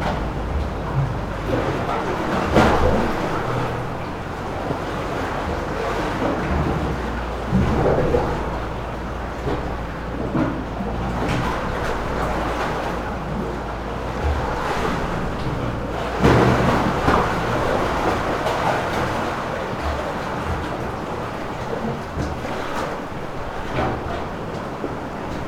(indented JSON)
{
  "title": "stromboli, ginostra, harbour - pier water",
  "date": "2009-10-20 15:00:00",
  "description": "sound of waves under the pier. this harbour was destroyed by heavy winter storms years ago, shortly after it was built. it's supposedly the smallest harbour of the world.",
  "latitude": "38.79",
  "longitude": "15.19",
  "timezone": "Europe/Rome"
}